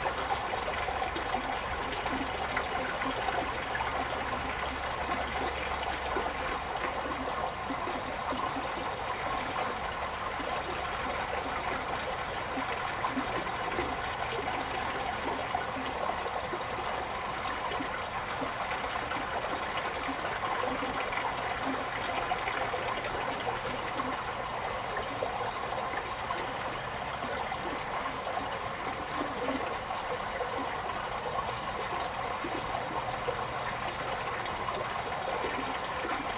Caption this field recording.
sound of the Flow, Zoom H2n MS+XY